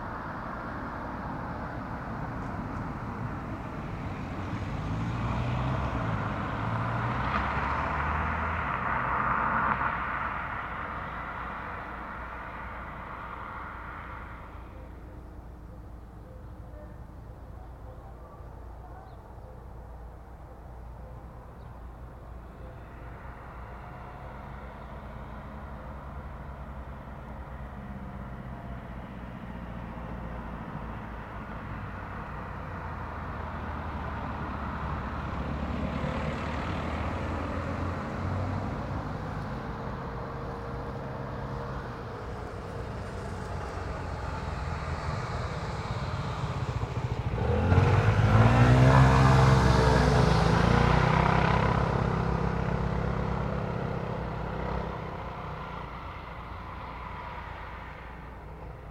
Rue de Bourbuel, Niévroz, France - Square ambience
Cars, a few birds, a cock.
Tech Note : Sony PCM-M10 internal microphones.
France métropolitaine, France, 22 July 2022